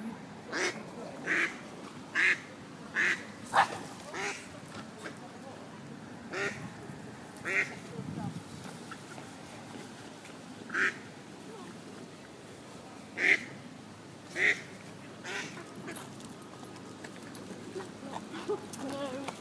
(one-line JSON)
{"title": "Oxford, Oxfordshire, Reino Unido - Upper Fisher Row", "date": "2014-08-14 13:00:00", "latitude": "51.75", "longitude": "-1.27", "altitude": "61", "timezone": "Europe/London"}